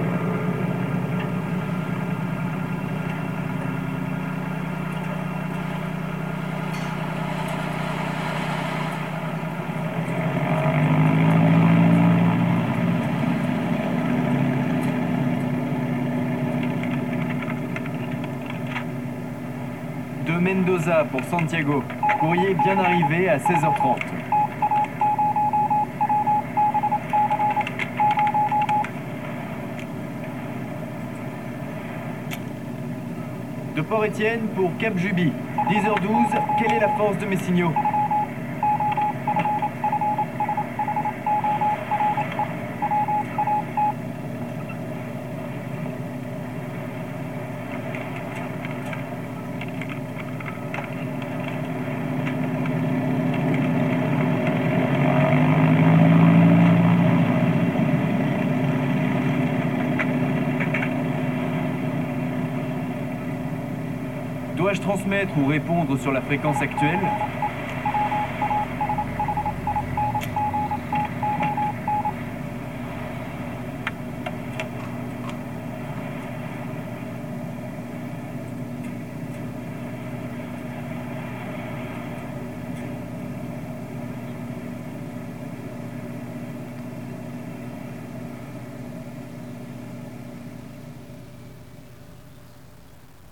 Rue Beppo de Massimi, Toulouse, France - the old radio station building
looped audio message from the old radio station building
on 7 December 1936 the station received the Following message " have cut power on aft right-hand engine "
from the Latécoère 300 christened Croix du Sud Flown by Jean Mermoz.
This was to be his last message before he disappeared over the Atlantic .
Captation : zoom h4n